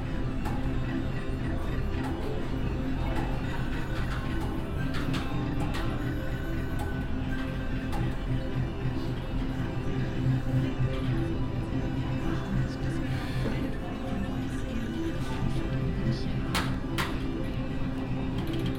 Another game hall atmosphere - here crowded with gamblers who all play kinds of electronic card games.
Projekt - Stadtklang//: Hörorte - topographic field recordings and social ambiences